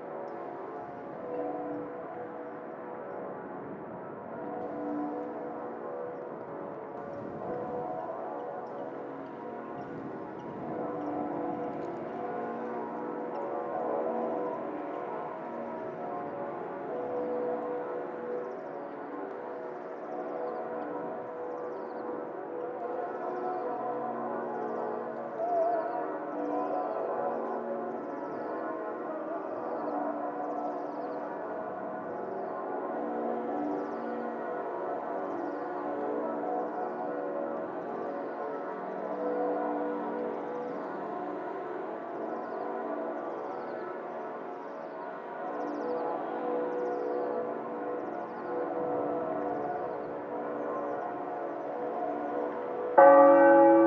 Easter Sunday 6am Łódź, Poland - Rooftop, Easter Sunday 6am Łódź, Poland
rooftop recording made at 6am on Easter Sunday. The bells mark the beginning of the procession around the church. Recorded during a sound workshop organized by the Museum Sztuki, Lodz.
8 April, 06:00